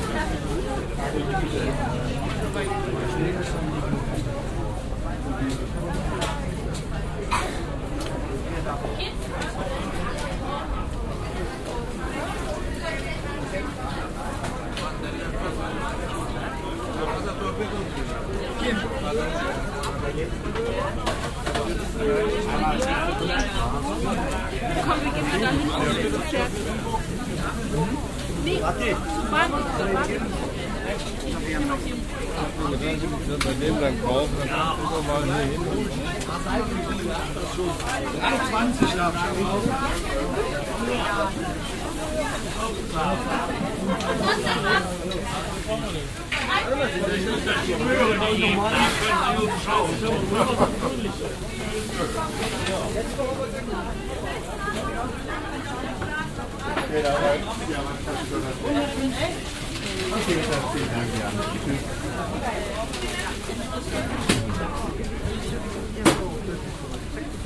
wochenmarkt im alten stadtzentrum von ratingen, aufnahme im frühjahr 07 morgens
project: social ambiences/ listen to the people - in & outdoor nearfield recordings
zentrum, marktplatz